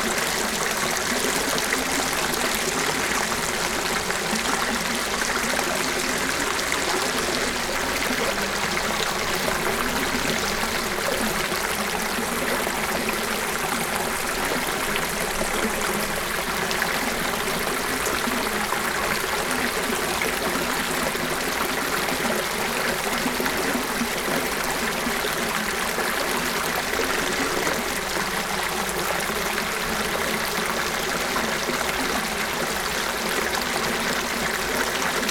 Brussels, Kinsendael natural reserve, along the Groelstbeek river
Groelstbeek river near the big stone.
SD-702, Me-64, random position.